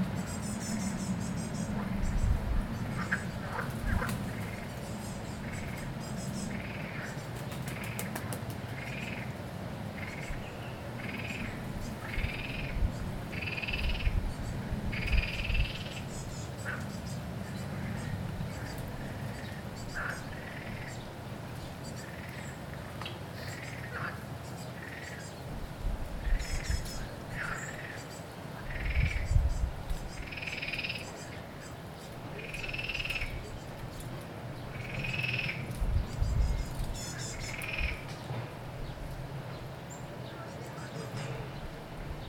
loading... - Bird crows and frogs at the Jerusalem Botanical Gardens
מחוז ירושלים, ישראל, 30 April